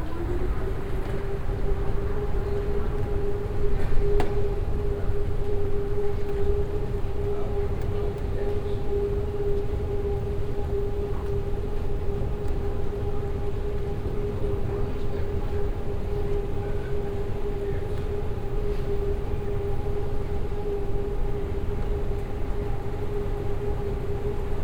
At the Narita Airport Terminal 2 - a repeated automatic female voice announcement at the moving staircase.
Voices of passing by passengers.
international city scapes - topographic field recordings and social ambiences
28 June 2011, ~18:00